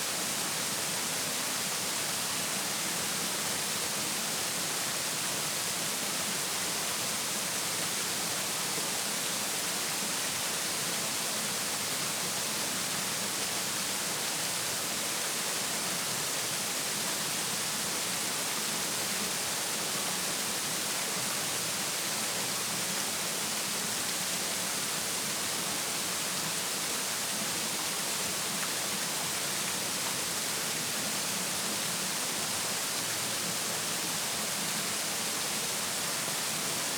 Walking Holme Bilberry Sinkhole
Hovering in the centre of the sinkhole.